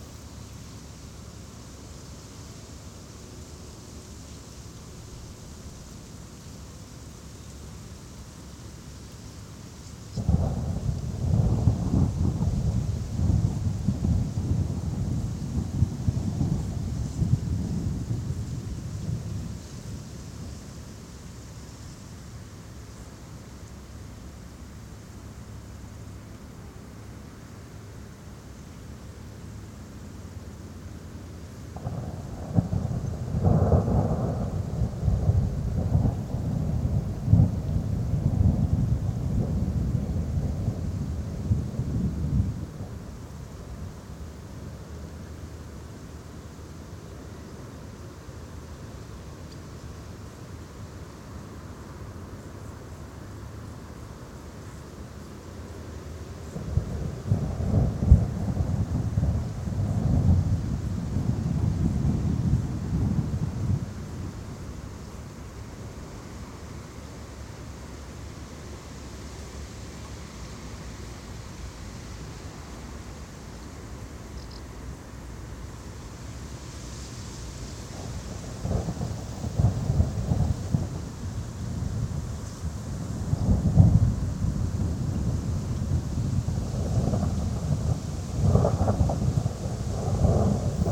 Jūrmala, Latvia, rain starts, abandoned area
walking through half abandoned aream collecting Ivan Chai for tea, suddenly sky darkens....